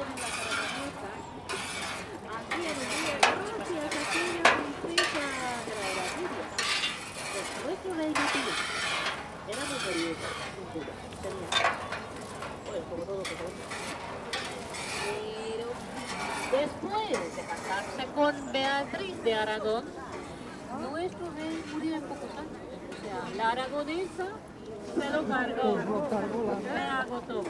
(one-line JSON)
{"title": "Buda Castle", "date": "2010-10-29 17:51:00", "description": "Impressions of autumn tourism in Budapest. From the chestnut vendour via a guided tour in spanish to the hungarian folk singer under the colonnades.", "latitude": "47.50", "longitude": "19.03", "altitude": "169", "timezone": "Europe/Budapest"}